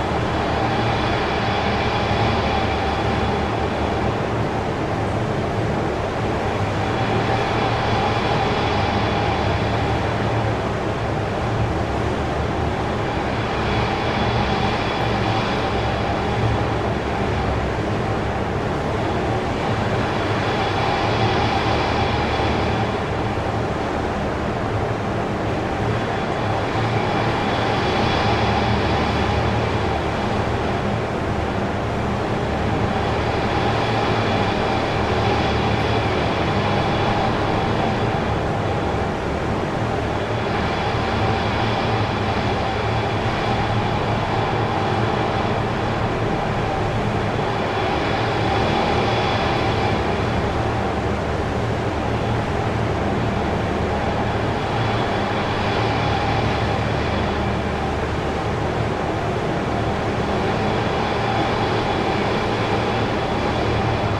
city water pumping station, Torun Poland
pump sounds of the city water supply
4 April 2011, 13:00